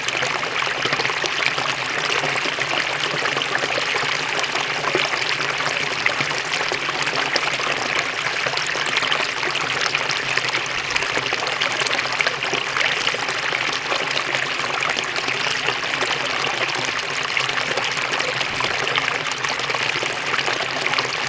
La Source, fontaine bassin artificiel
Rue Alain Fournier, Fontaine dans bassin artificiel à Orléans - La Source (45 - France)
> En construction sur la carte...